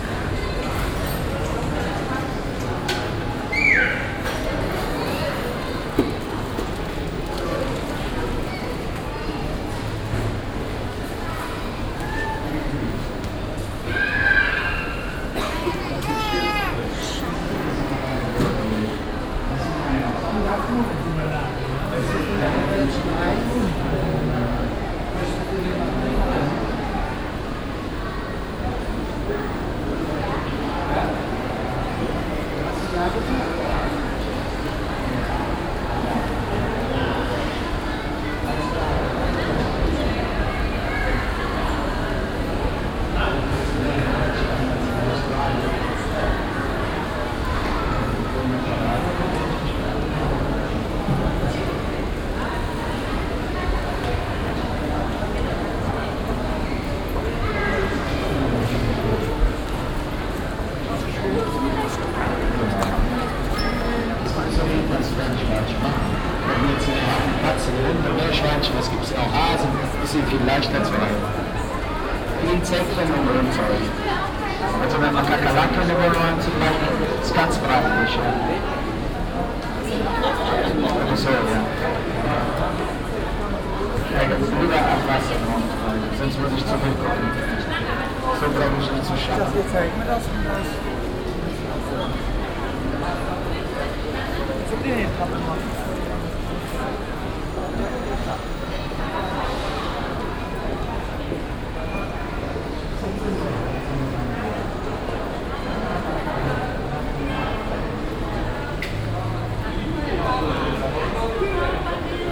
cologne, breite strasse, dumont caree
betriebsames treiben im innenbereich der laden passage, fahrten aud den rolltreppen, modreration einer tierpräsentation
soundmap nrw: social ambiences/ listen to the people - in & outdoor nearfield recordings